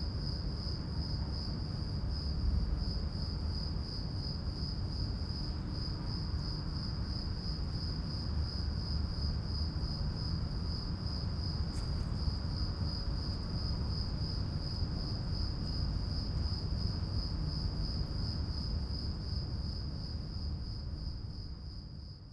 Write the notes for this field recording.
in front of former stables, night ambience, crickets echoing in the empty building, clicks of bats, (SD702, NT1A AB)